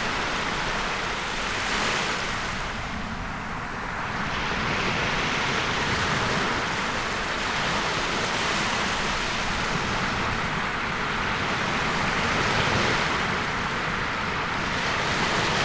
Holkham Beach, Holkham, Norfolk, UK - Waves breaking on shore
Waves breaking on the sea shore. Recorded on a Zoom H1n with two Clippy EM272 mics each clipped into a side pocket of a backpack.
East of England, England, United Kingdom, 12 September 2021, 16:44